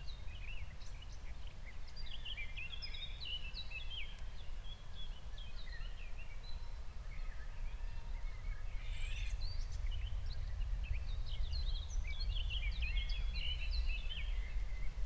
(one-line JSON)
{"title": "Berlin, Buch, Mittelbruch / Torfstich - wetland, nature reserve", "date": "2020-06-18 20:00:00", "description": "20:00 Berlin, Buch, Mittelbruch / Torfstich 1", "latitude": "52.65", "longitude": "13.50", "altitude": "55", "timezone": "Europe/Berlin"}